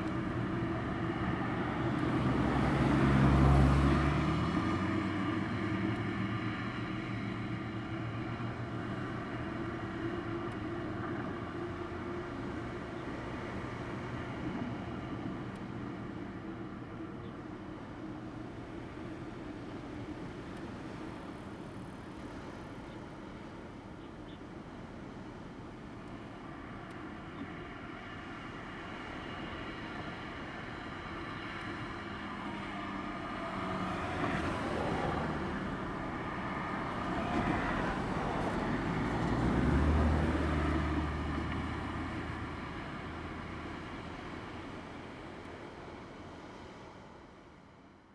大竹村, Dawu Township - Traffic and waves sound
Sound of the waves, Traffic sound
Zoom H2n MS +XY